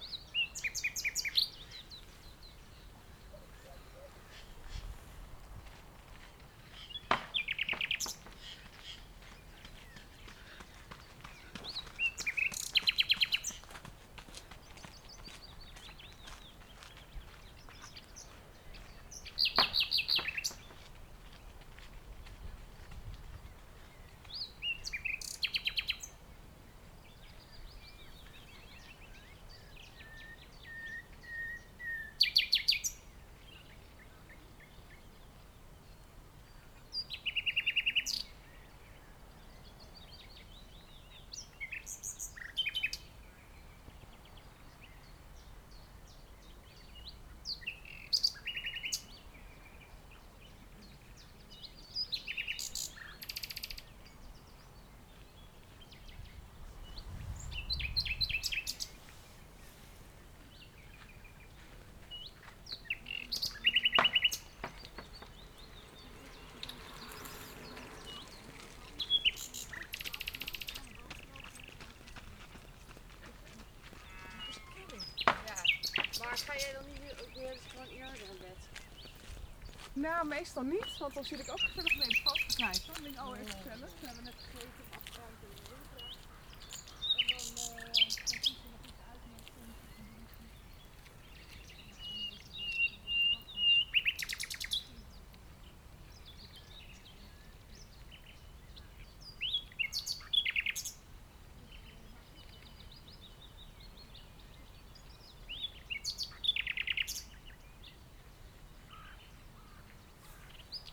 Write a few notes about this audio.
Bird and joggers in the dunes. Recorded with Zoom H2 with additional Sound Professionals SP-TFB-2 binaural microphones.